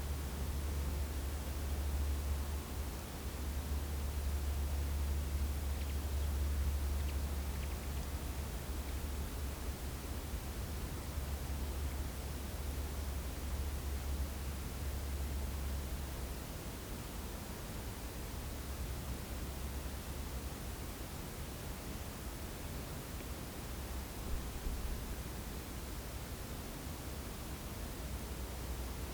Terschelling, Oosterend - Oosterend opname 1
Its very quiet!